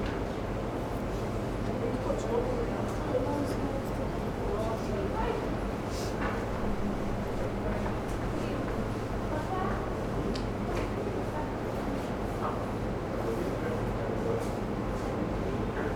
Čevljarska ulica, Koper - cafe outside, narrow street
street ambience, stony paths, afternoon, cold day, first words into red notebook in Koper